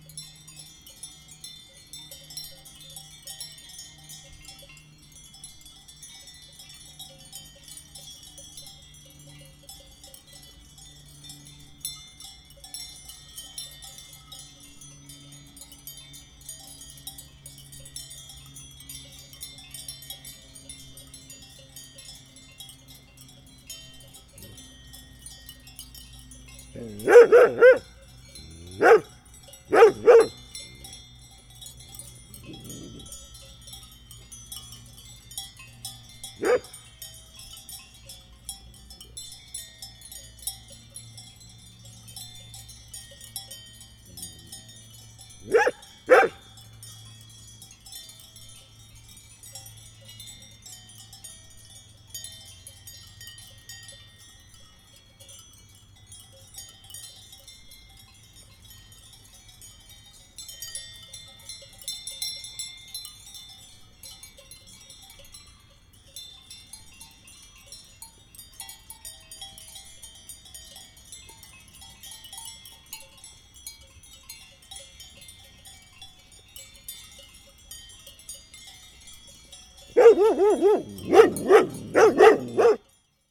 Chem. de la Plaine, Chamonix-Mont-Blanc, France - Chamonix
Chamonix
Ambiance de montagne - panure